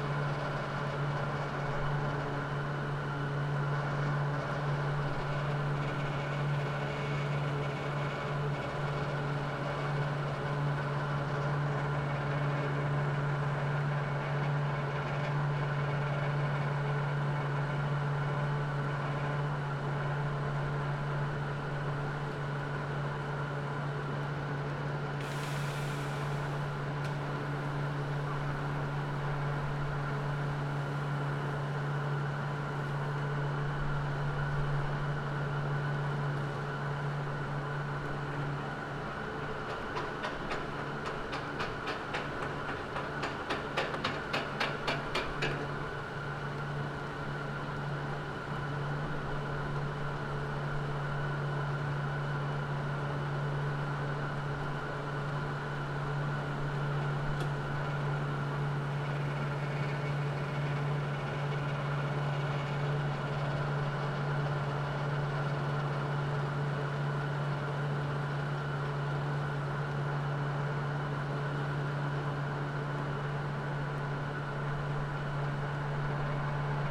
a metal bobsled arrives, transporting systems gets activated.
(SD702, SL502 ORTF)